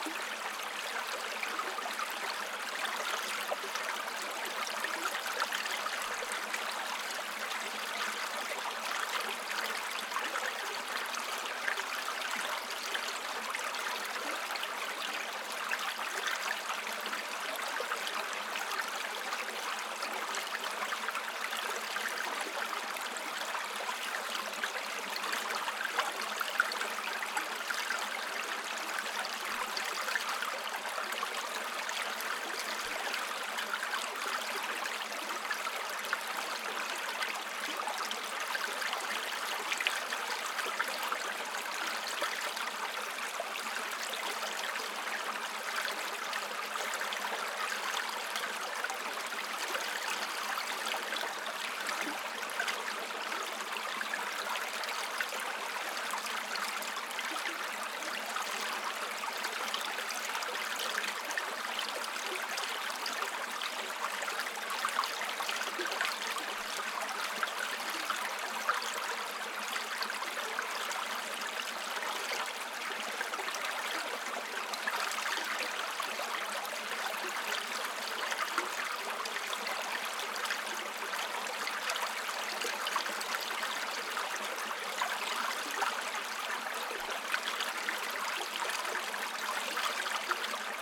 Small river Krokslis ruuning into Rubikiai lake
Utenos apskritis, Lietuva, 1 May